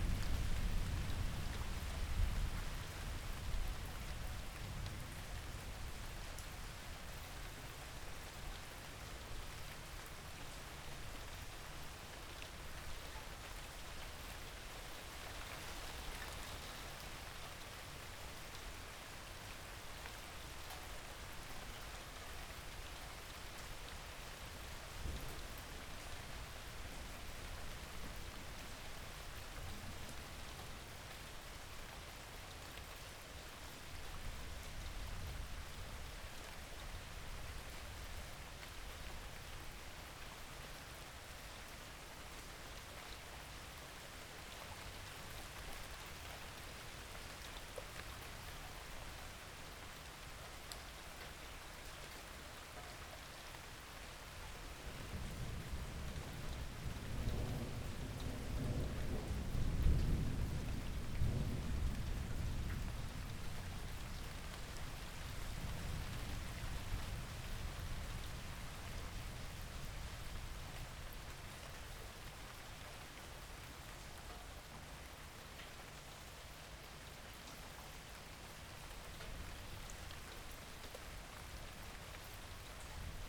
Loughborough Junction, London, UK - daybreak 5 AM
daybreak around 5 AM on World Listening Day 2014
Roland R-9, electret stereo omnis, out an upstairs window onto back gardens in S London
Lambeth, London, UK, 18 July 2014, ~05:00